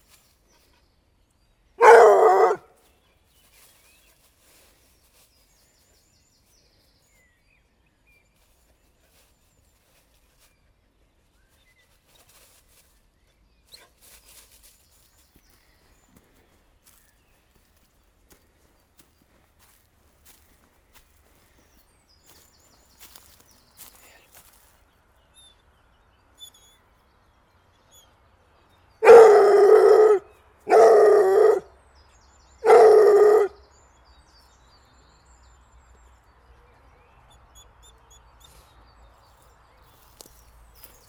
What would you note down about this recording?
In the very small Lachal village, two dogs are barking especially hardly. It's "Luciole" and "Chloé", two Ariegeois dogs. For sure, the others small dogs are following ! You can hear these two dogs from La Bastille, a touristical fortress just near Grenoble. In facts, these dogs are very famous !